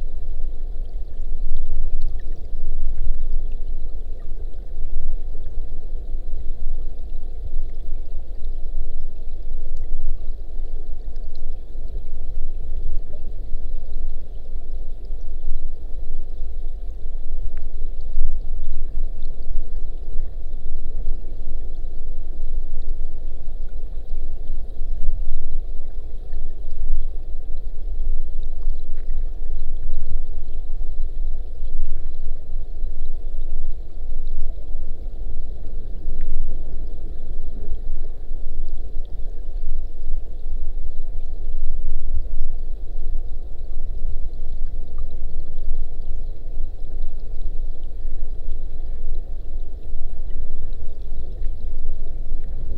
Vyžuonos, Lithuania, river underwater
river underwater not so far from a dam